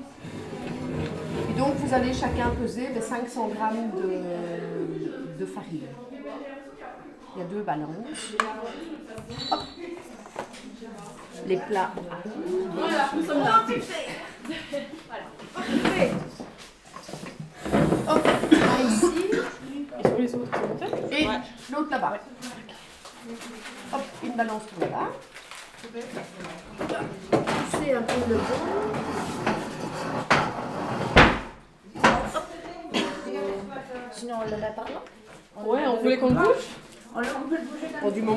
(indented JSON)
{
  "title": "L'Hocaille, Ottignies-Louvain-la-Neuve, Belgique - KAP Le Levant",
  "date": "2016-03-24 15:20:00",
  "description": "This is the continuation of the first recording, the workshop is beginning. As there's a lot of people, a few place (kots are small) and very friendly ambience, it's very noisy ! People begin to learn how to make bread.",
  "latitude": "50.67",
  "longitude": "4.61",
  "altitude": "125",
  "timezone": "Europe/Brussels"
}